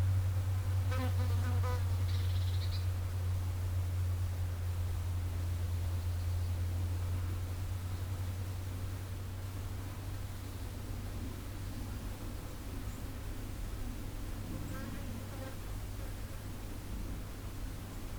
Linköping S, Schweden - Sweden, Stafsäter - bees in the forest
Inside a small forest part nearby the street. The sound of bees accompanied by some rare street traffic and the sound of a plane crossing the sky.
international soundmap - social ambiences and topographic field recordings
23 August 2013, 17:30